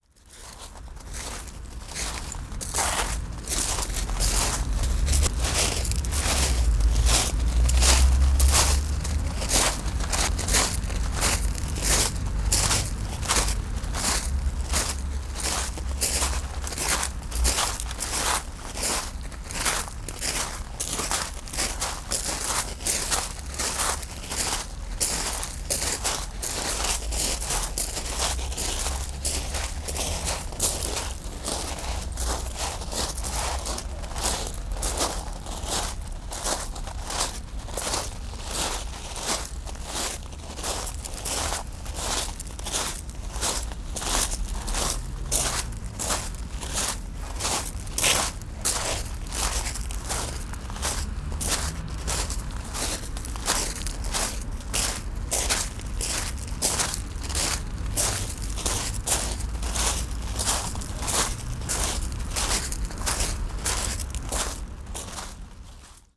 Targ Rakowy, Gdańsk, Polska - IKM picnic 2
Dźwięki nagrano podczas pikniku zrealizowanego przez Instytut Kultury Miejskiej.
Nagrania dokonano z wykorzystaniem mikrofonów kontaktowych.